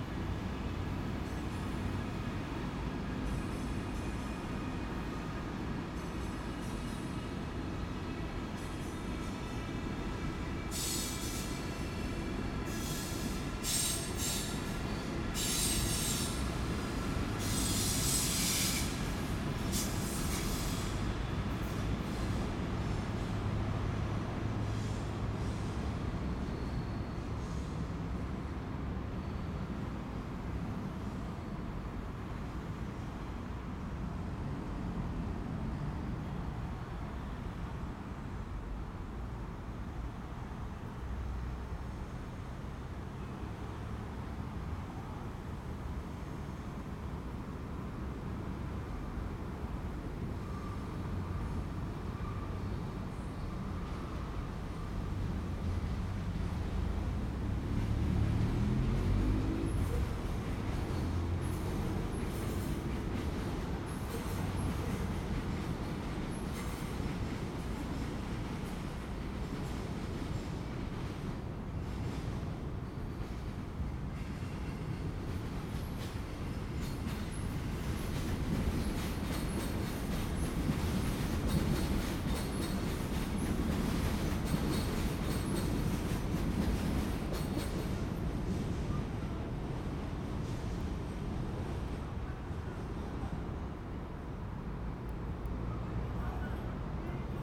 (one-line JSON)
{"title": "Botanique, Rue Royale, Saint-Josse-ten-Noode, Belgium - Trains passing beside the Botanique", "date": "2013-06-19 17:58:00", "description": "The sound of the trains passing closely by, standing under the leaves in the Botanique.", "latitude": "50.86", "longitude": "4.36", "altitude": "25", "timezone": "Europe/Brussels"}